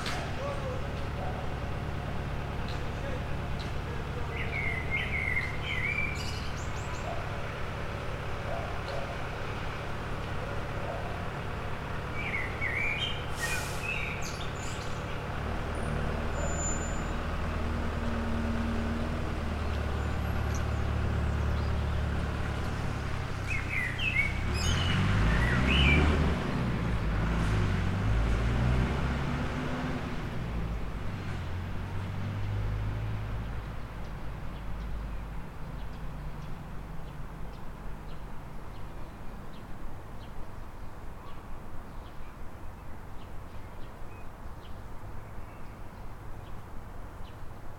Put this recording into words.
Persistent birdsong is met with a loud humming of a truck in the background. The truck drives off, and as if taking a birdsong with it, we're left with more quiet soundscape. Recorded with ZOOM H5.